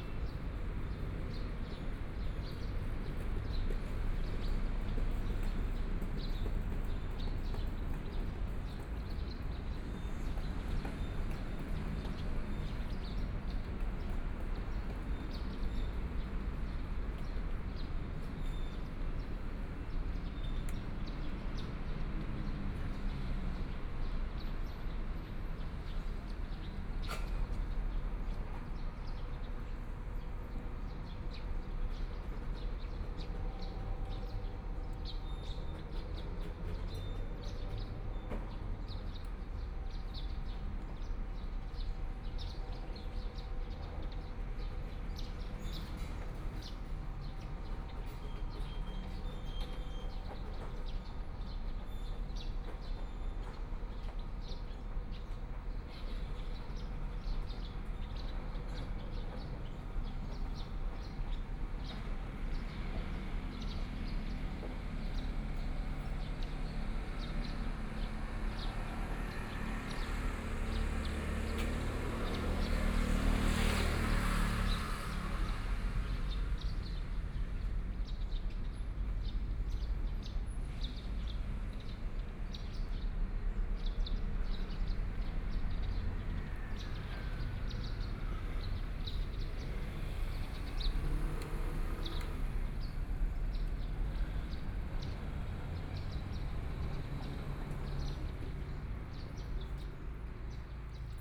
中山區行政里, Taipei City - Morning at the corner
Morning at the corner, Traffic Sound, Birds singing
Binaural recordings